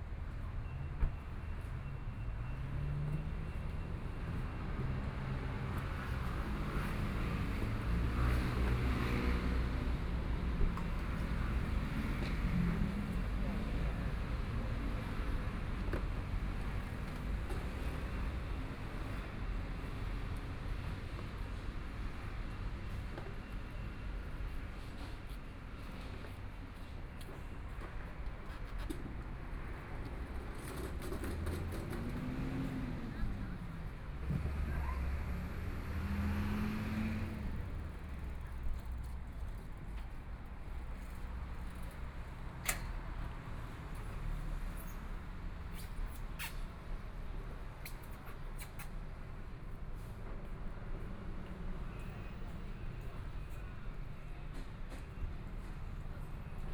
Zhongshan District, Taipei City - Entrance to the market
Entrance to the market, Traffic Sound, Being compiled and ready to break businessman, Binaural recordings, Zoom H4n+ Soundman OKM II
Taipei City, Zhongshan District, 台北漁市, 20 January, 14:42